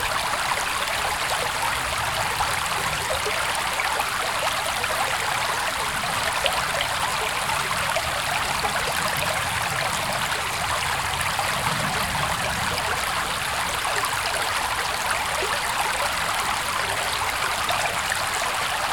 Highland Water, Minstead, UK - 028 Highland Water